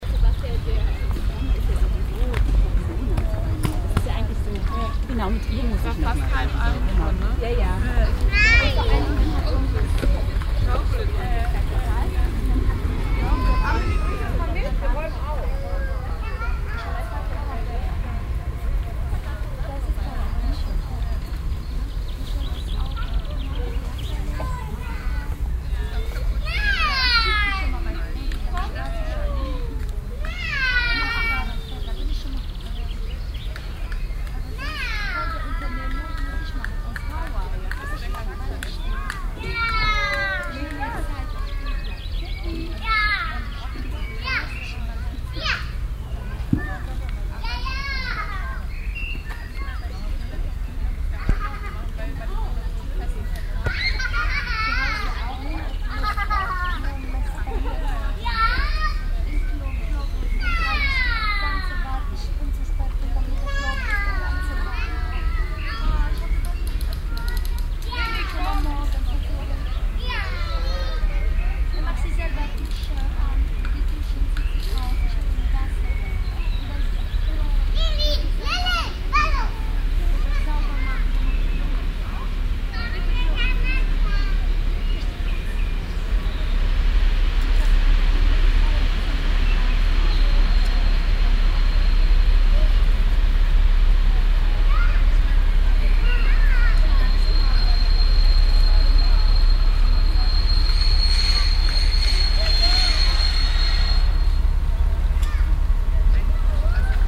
stereofeldaufnahmen im mai 08 - morgens
project: klang raum garten/ sound in public spaces - in & outdoor nearfield recordings